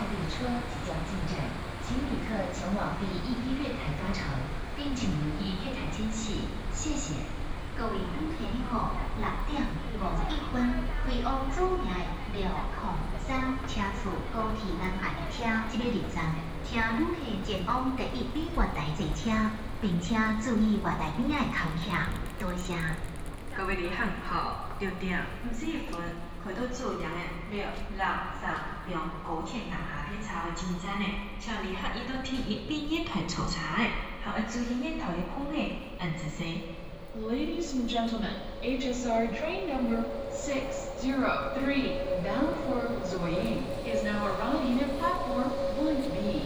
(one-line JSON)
{"title": "Taipei Station, Taipei city, Taiwan - In the station hall", "date": "2017-03-03 06:43:00", "description": "In the station hall, Station information broadcast", "latitude": "25.05", "longitude": "121.52", "altitude": "29", "timezone": "Asia/Taipei"}